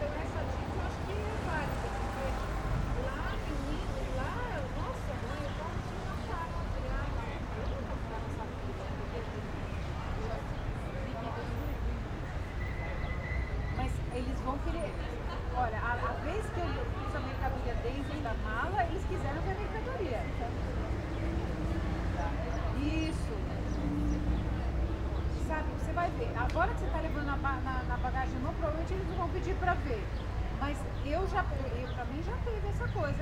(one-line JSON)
{"title": "Perugia, Italia - traffic and voice in front of the university", "date": "2014-05-21 17:02:00", "description": "people waiting for the bus, traffic\n[XY: smk-h8k -> fr2le]", "latitude": "43.11", "longitude": "12.39", "altitude": "450", "timezone": "Europe/Rome"}